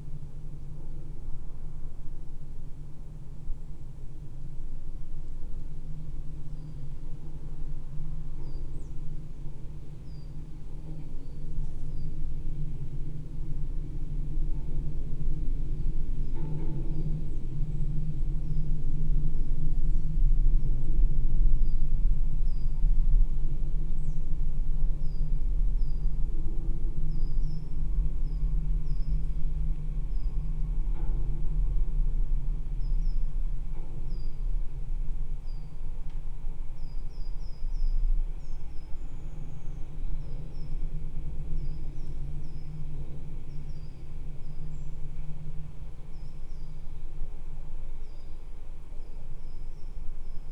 Vilkabrukiai, Lithuania, study of a tower in the forest
some old forest firefighters (at least I think so) tower. calm evening. two omni mics for ambience and contact mic for vibration
11 September 2018, 17:20